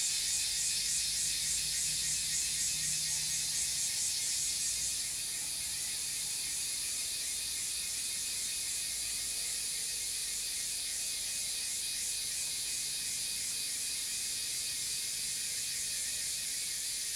{"title": "種瓜路, 桃米里, 埔里鎮 - Cicadas sound", "date": "2016-06-07 09:55:00", "description": "Cicadas cry, Traffic Sound, Bird sounds\nZoom H2n MS+XY", "latitude": "23.95", "longitude": "120.91", "altitude": "598", "timezone": "Asia/Taipei"}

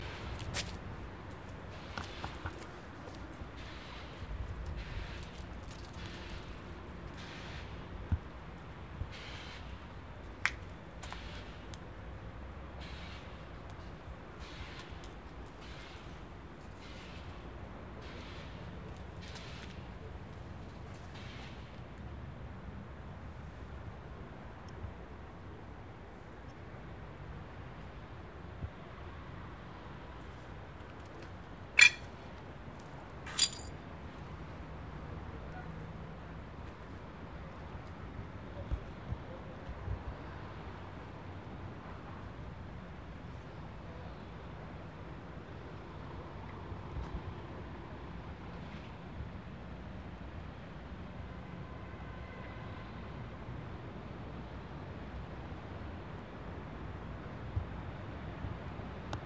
{"title": "Lodz Fabryczna PKS, plac Salacinskiego Lodz", "date": "2011-11-18 10:15:00", "description": "closed bus station Fabryczna Lodz", "latitude": "51.77", "longitude": "19.47", "altitude": "215", "timezone": "Europe/Warsaw"}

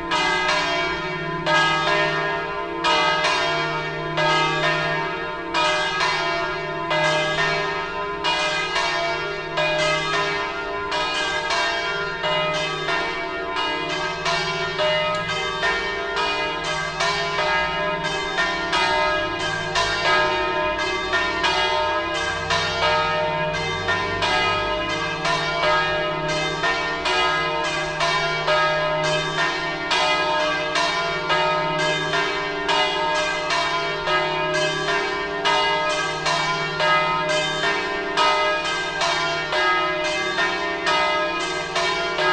{"title": "Sedico (Belluno) Italy", "date": "2010-07-18 16:29:00", "description": "Campane della parrocchiale di Sedico per la funzione religiosa", "latitude": "46.11", "longitude": "12.10", "altitude": "318", "timezone": "Europe/Rome"}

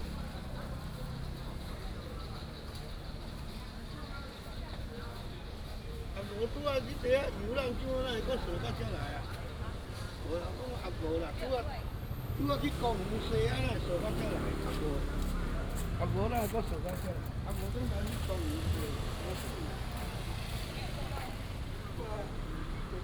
{"title": "古風公園, Da’an Dist., Taipei City - Traditional markets and the park", "date": "2015-07-21 08:35:00", "description": "Traditional markets and the park, Bird calls", "latitude": "25.02", "longitude": "121.53", "altitude": "20", "timezone": "Asia/Taipei"}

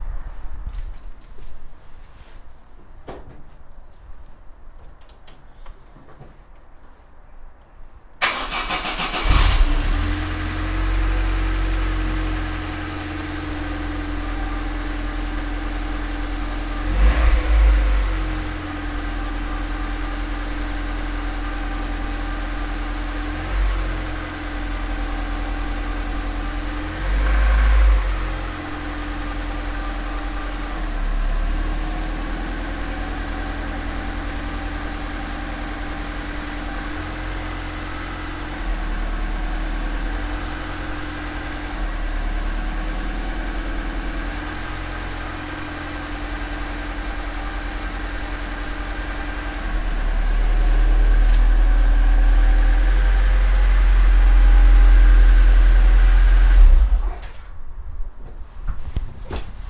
{"title": "Stefanii Hejmanowskiej, Gorzów Wielkopolski, Polska - Opel Astra in the garage.", "date": "2020-02-15 12:10:00", "description": "Launching Opel Astra engine in the garage.", "latitude": "52.73", "longitude": "15.24", "altitude": "27", "timezone": "Europe/Warsaw"}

